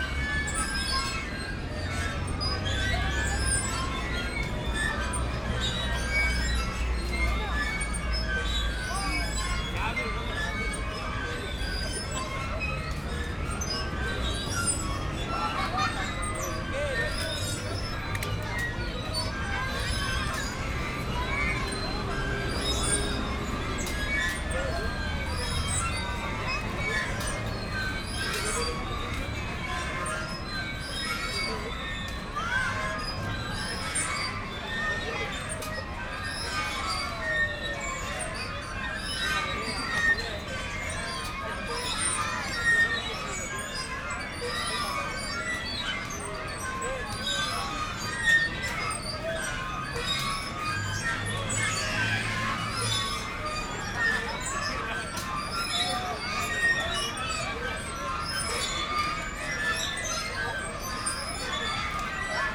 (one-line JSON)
{"title": "Plaza Simon Bolivar, Valparaíso, Chile - playground swings", "date": "2015-11-28 19:15:00", "description": "Plaza Simon Bolivar, Valparaíso, Chile, on a Saturday spring evening, kids enjoying the squeaking swings on this square, which is surrounded by heavy traffic.\n(SD702, DPA4060)", "latitude": "-33.05", "longitude": "-71.62", "altitude": "16", "timezone": "America/Santiago"}